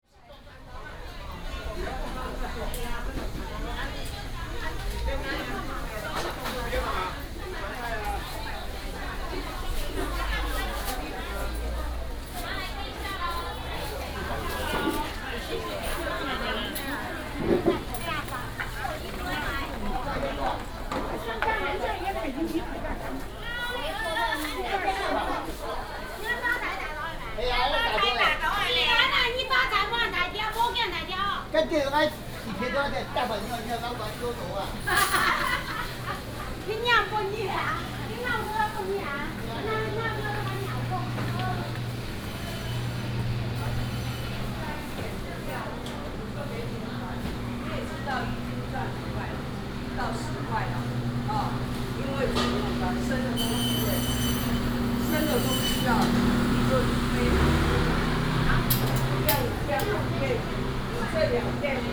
竹東中央市場, Zhudong Township - Walking through the market
Walking through the market, motorcycle
17 January 2017, 11:45